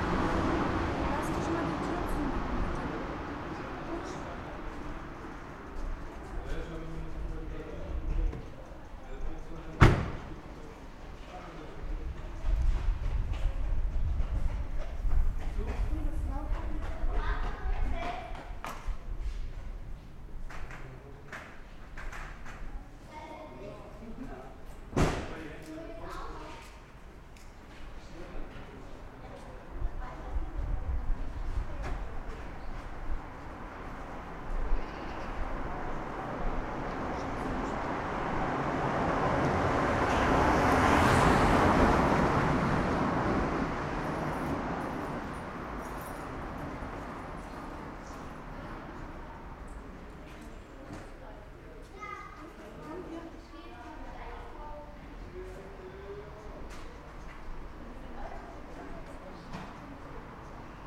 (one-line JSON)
{"title": "leipzig lindenau, guthsmuthsstraße", "date": "2011-08-31 17:30:00", "description": "In der guthsmuthsstraße, aufgenommen vom autodach aus auf dem parkplatz am straßenrand. anwohner und autos.", "latitude": "51.33", "longitude": "12.33", "altitude": "116", "timezone": "Europe/Berlin"}